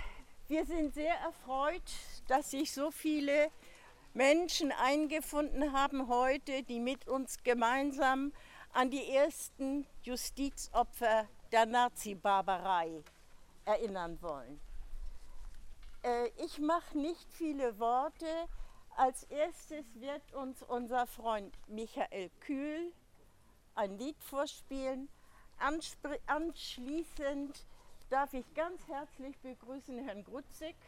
Altonaer Blutsonntag - Gedenktag Justizopfer Altonaer Blutsonntag, 01.08.2009. Teil 1
Rede von Andreas Grutzeck, Schatzmeister der CDU Fraktion & Präsident der Bezirksversammlung Hamburg Altona
Hamburg, 1 August